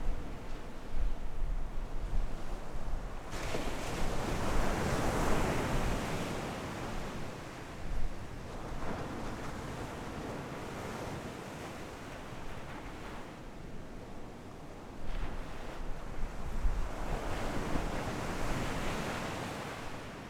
午沙港, Beigan Township - Sound of the waves
Sound of the waves, Very hot weather, Small port
Zoom H6 XY